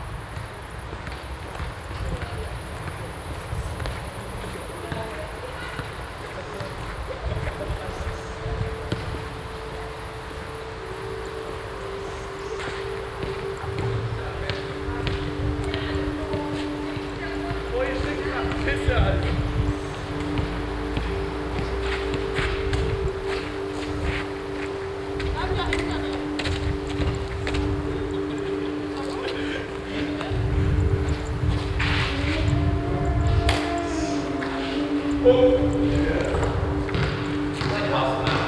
{"title": "Würzburg, Deutschland - Würzburg, Mainwiesen, under bridge", "date": "2013-07-24 21:10:00", "description": "On a summer slightly windy evening under the bridge. The sound of young people playing basketball and feather ball and then the vocal sound of a singer from a concert from the nearby hafensommer festival venue.\nsoundmap d - social ambiences and topographic field recordings", "latitude": "49.80", "longitude": "9.92", "altitude": "172", "timezone": "Europe/Berlin"}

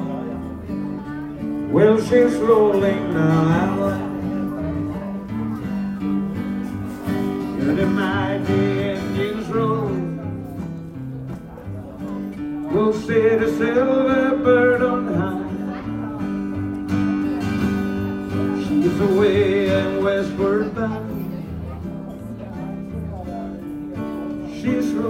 {"title": "night ferry, solo entertainment aboard", "description": "recorded on night ferry trelleborg - travemuende, august 10 to 11, 2008.", "latitude": "55.18", "longitude": "13.04", "timezone": "GMT+1"}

